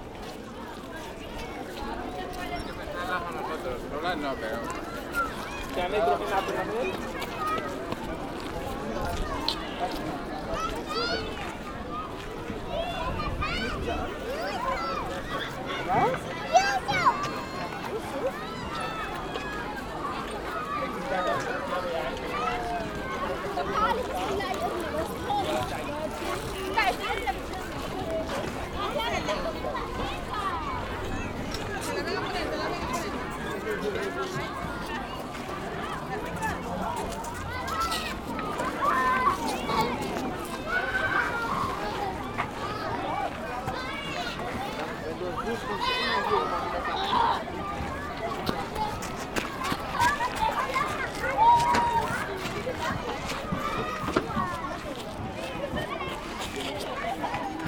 {
  "title": "Hamburg, Deutschland - Children playing with water",
  "date": "2019-04-19 15:30:00",
  "description": "Planten un Blomen, Großer spielplatz. Into the huge botanic garden of Hamburg, a colossal amount of children playing in the park.",
  "latitude": "53.56",
  "longitude": "9.98",
  "altitude": "19",
  "timezone": "GMT+1"
}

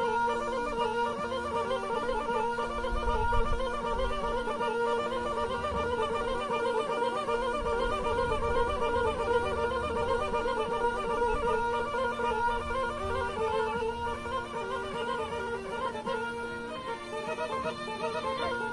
{
  "title": "M.Lampis: Cabras - Su Ballu Crabarissu",
  "latitude": "39.93",
  "longitude": "8.53",
  "altitude": "7",
  "timezone": "GMT+1"
}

